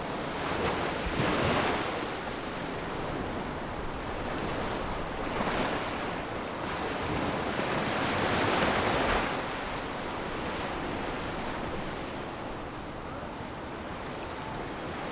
橋仔漁港, Beigan Township - Small fishing port
Sound wave, Small fishing port
Zoom H6 +Rode NT4
馬祖列島 (Lienchiang), 福建省, Mainland - Taiwan Border, 13 October 2014